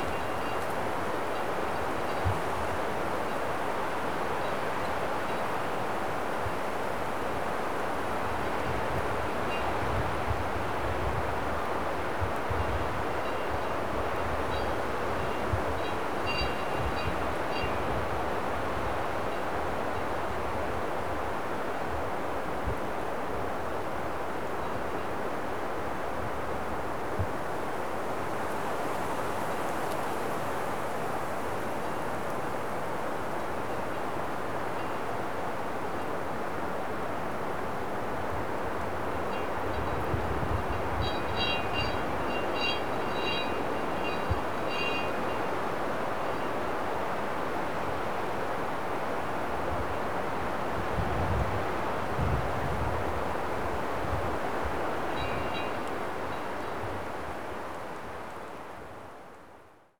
while walking in the woods i noticed these distant clanks breaking through strong wind and leaf rattle. couldn't figure out what it was, a few minute search was not successful. i was walking around it but couldn't quite pinpoint it. a sound secret of the coastal forest.